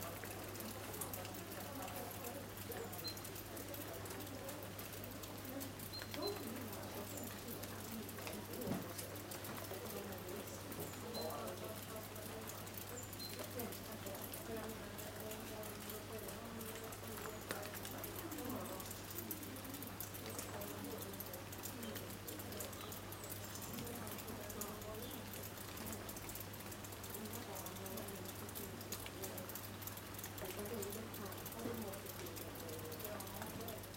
gamla linköping, veranda, rain, house martins
Linköping, Sweden